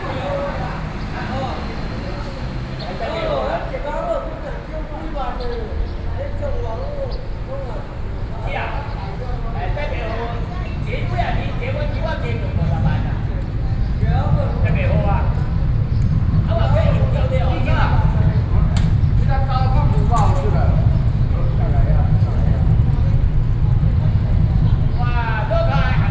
Xinyi Rd., Xizhi Dist., New Taipei City - in front of the station